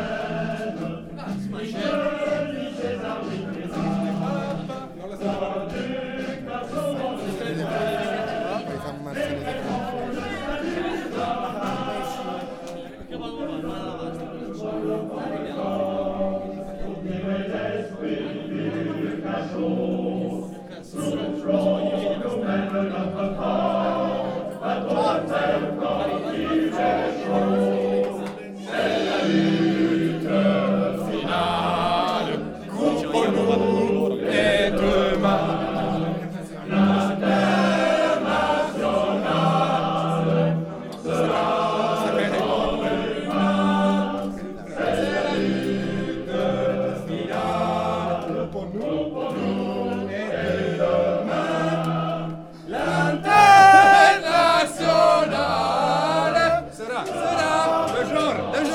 San Marzano, Taranto, Italy. Sonic memory: Tuning on IntSocialism in Southern Italy.
People fighting against the installation of one of the biggest landfill in southern italy, in a moment of rest after a big demonstration in the square, dreaming and tuning on Linternazionale socialism. The fight was supressed in the silence of local population, adding another source of probable pollution on an already compromised land.
San Marzano di San Giuseppe Taranto, Italy, January 2008